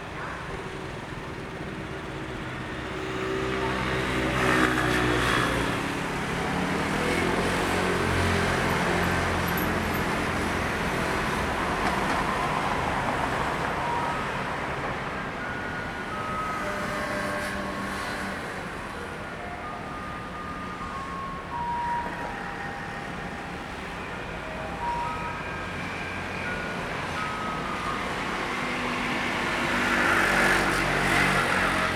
Cianjin District - Garbage truck arrived
Garbage truck arrived, Sony ECM-MS907, Sony Hi-MD MZ-RH1
高雄市 (Kaohsiung City), 中華民國